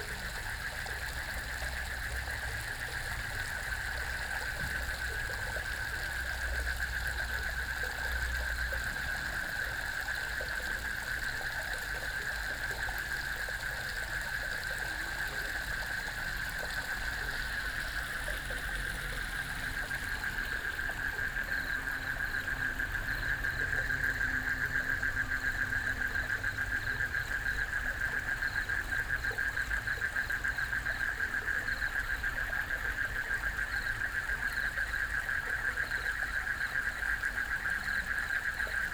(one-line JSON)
{"title": "桃米紙教堂, 南投縣埔里鎮桃米里, Taiwan - Frogs and Flow sound", "date": "2016-04-18 19:30:00", "description": "Frogs chirping, Flow sound, Traffic Sound", "latitude": "23.94", "longitude": "120.93", "altitude": "468", "timezone": "Asia/Taipei"}